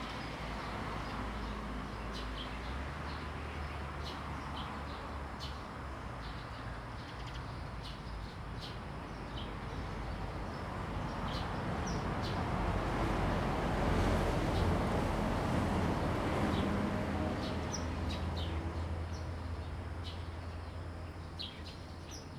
美農村, Beinan Township - Birdsong
Birdsong, Traffic Sound, Small village
Zoom H2n MS+ XY
Taitung County, Taiwan